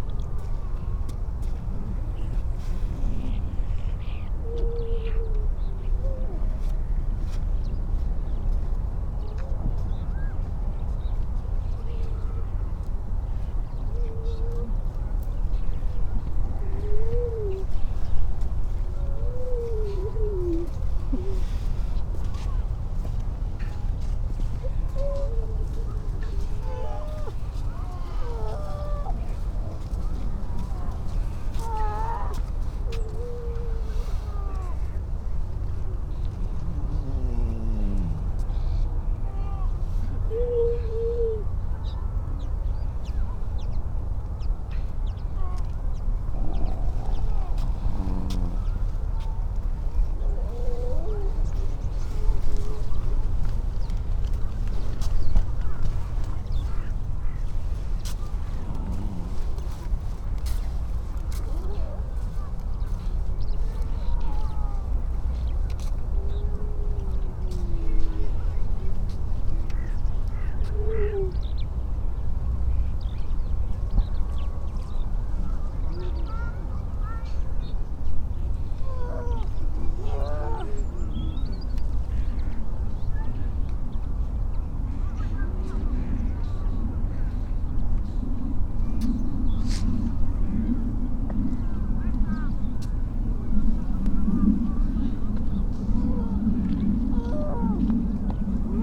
Unnamed Road, Louth, UK - grey seals ... donna nook ...
grey seals ... donna nook ... salt marsh where grey seals come to have their pups between oct-dec ... most calls from females and pups ... SASS ... bird calls from ... magpie ... brambling ... pipit ... pied wagtail ... skylark ... starling ... redshank ... curlew ... robin ... linnet ... crow ... wren ... dunnock ... every sort of background noise ... the public and creatures are separated by a fence ...
3 December 2019, 09:55